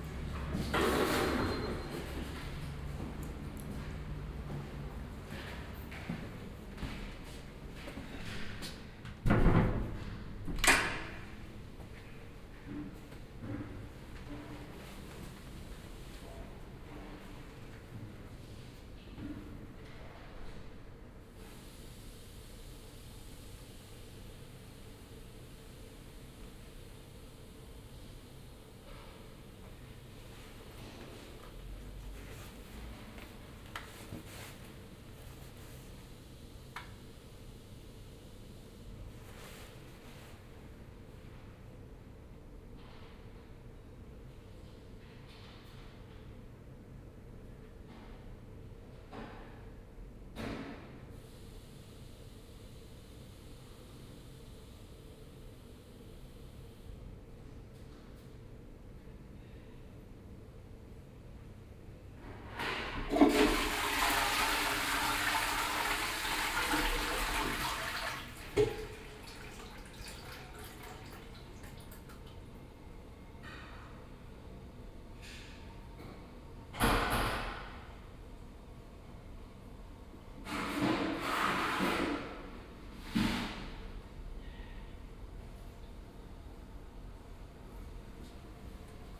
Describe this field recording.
quick exploration of a city wc, moving in from the outside souvenir stand. a short electric blackout blocked the exit for a while.